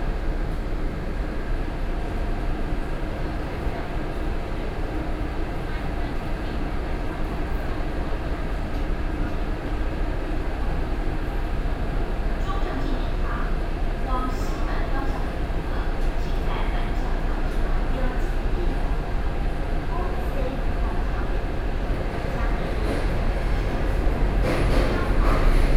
Xindian Line (Taipei Metro), Taipei City - Xindian Line
from Taipower Building to Taipei Main Station, Sony PCM D50 + Soundman OKM II
Taipei City, Taiwan